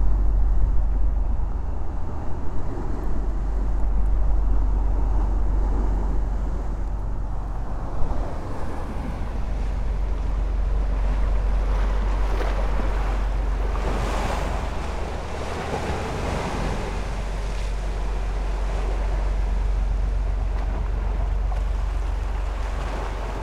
Trouville-la-Haule, France - Fast boat
We are lost, and found this completely impossible to access place. The paths are completely closed with brambles and swamps. Here, we make a break. A big boat is passing by quicly on the Seine river, this makes big waves.
22 July 2016